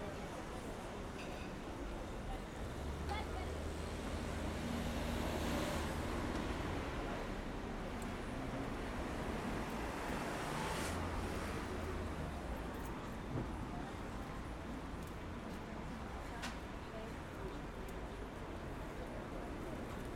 Schwanthalerstraße, München, Deutschland - Straßenecke Hauptbahnhofviertel München 2022
Bayern, Deutschland, 1 September 2022